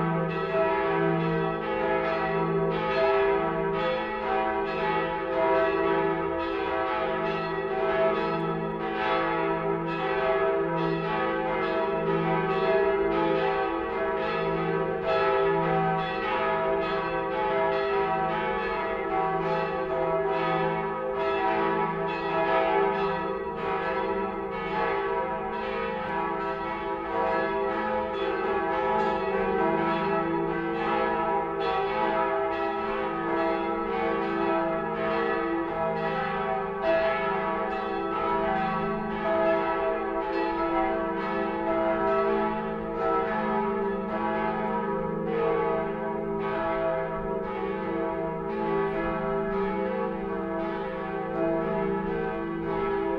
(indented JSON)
{
  "title": "Katedralna, Opole, Poland - (43) The cathedral bells",
  "date": "2016-11-13 12:00:00",
  "description": "Binaural recording of the cathedral bells in Opole.\nrecorded with Soundman OKM + Zoom H2n\nsound posted by Katarzyna Trzeciak",
  "latitude": "50.67",
  "longitude": "17.92",
  "altitude": "155",
  "timezone": "Europe/Warsaw"
}